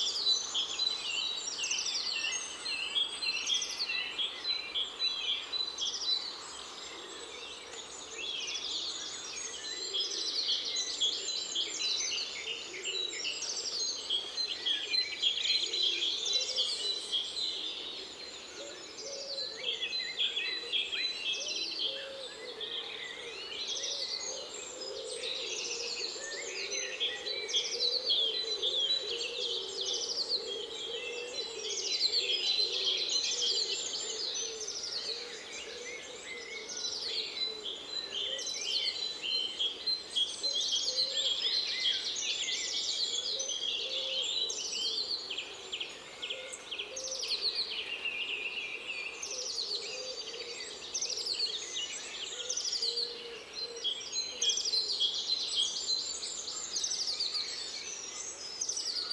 Prospect, Box, Corsham, UK - Dawn Chorus
Binaural recording of dawn chorus on a windless sunny morning. Using Zoom H5 recorder with Luhd PM-01 Binaural in-ear microphones.
April 2017